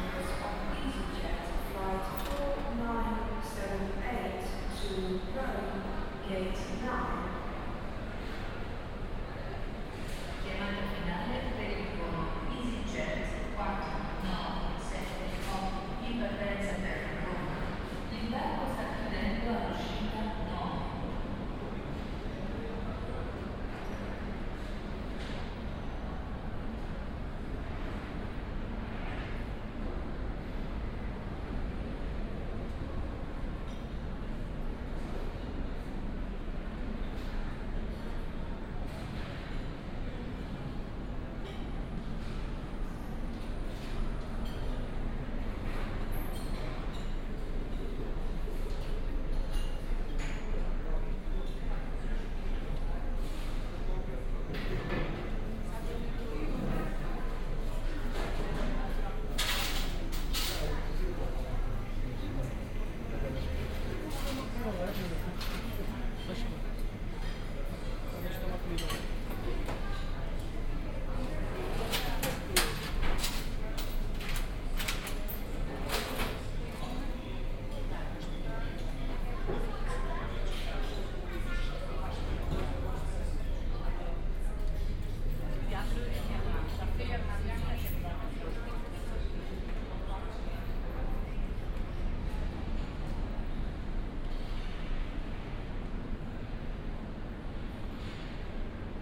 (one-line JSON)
{"title": "lisbon, airport - soundwalk", "date": "2010-07-04 13:20:00", "description": "soundwalk through different parts and levels of lisbon airport. walks starts at the entrance 1st floor and ends in parking area.\nbinaural, use headphones.", "latitude": "38.77", "longitude": "-9.13", "altitude": "104", "timezone": "Europe/Lisbon"}